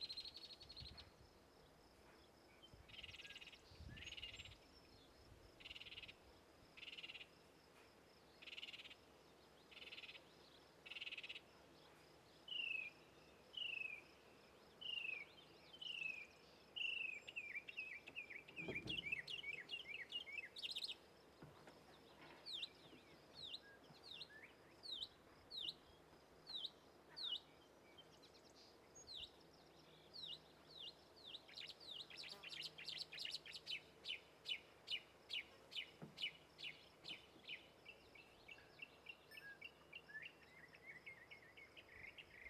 Truro Mocking Bird, Truro, Ma, Cape Cod
Truro Ma, Cape Cod, Mocking Bird